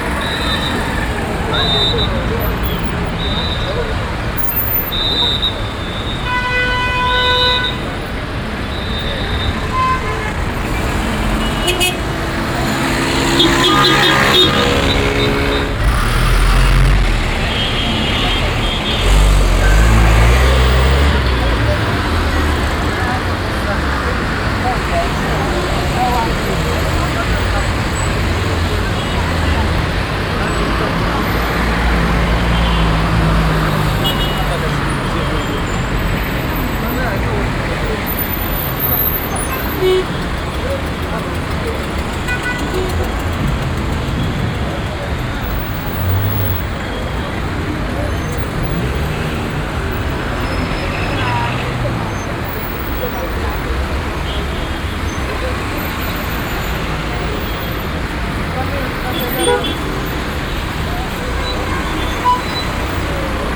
At the place du 14 januar 2011, a big traffic circle, on an early afternoon.
The sound of dense street traffic and the whistles of two policemen.
international city scapes - social ambiences and topographic field recordings